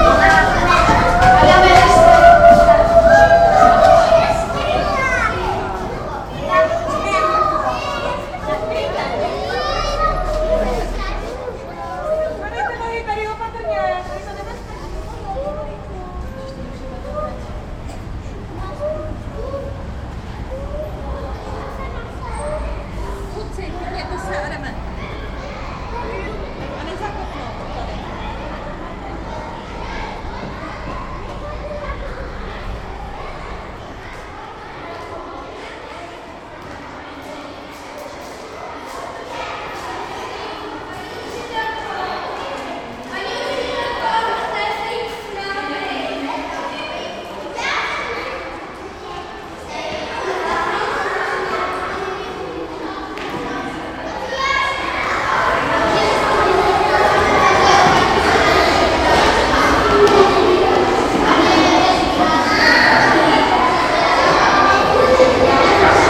Divadlo v Dlouhé
school children leaving the theater performance in the passage.